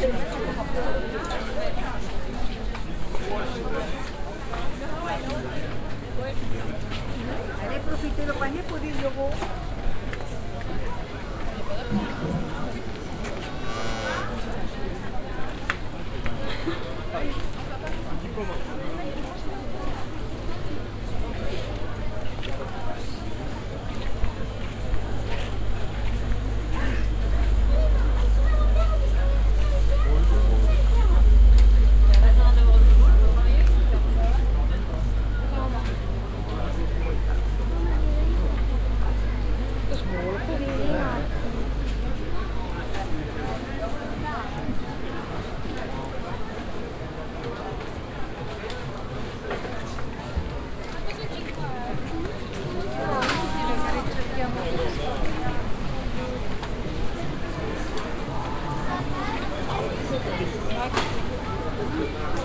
On the weekly market in between the market stalls that are distributed all over the street and square. The sound of the general atmosphere and a whistling marketeer.
international village scapes - topographic field recordings and social ambiences